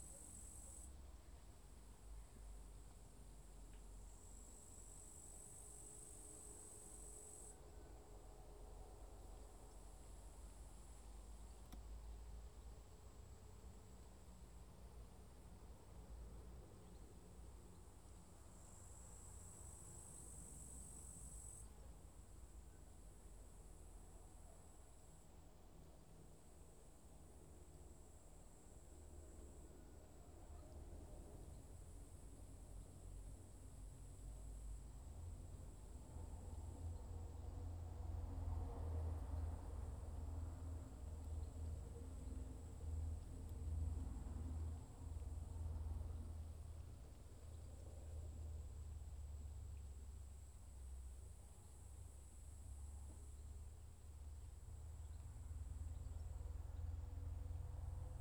Kreva, Belarus, at castle ruins